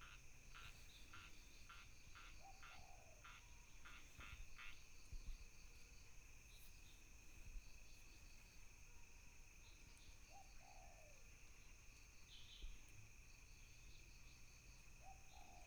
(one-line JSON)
{"title": "種瓜路, 桃米里, Puli Township - In the woods", "date": "2016-04-25 16:49:00", "description": "In the woods, Bird sounds, Frogs chirping", "latitude": "23.96", "longitude": "120.92", "altitude": "643", "timezone": "Asia/Taipei"}